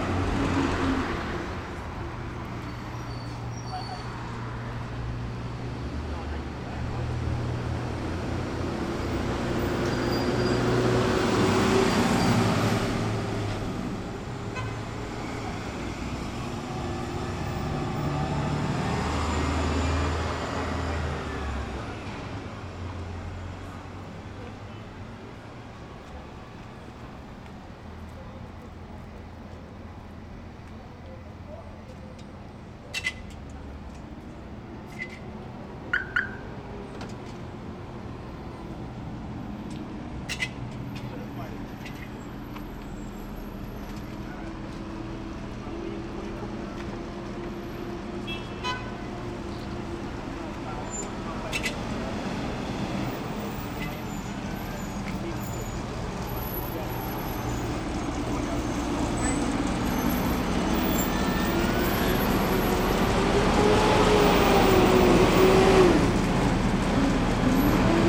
Outside Bodega, Corner of Rockaway Avenue & Sumpter Street, Ocean Hill, Brooklyn, NY, USA - B.E.E.S. - MaD Community Exploration Soundwalk 1
Anthrophonic soundscape outside corner bodega, near the oldest school public school building in Brooklyn, NY, which houses the new Brooklyn Environmental Exploration School. Captured during a Making a Difference workshop, facilitated by Community Works, which models tools for connecting students to communities. 6 minutes, 12 sec. Metallic sound at 115 sec. in is the door of the bodega.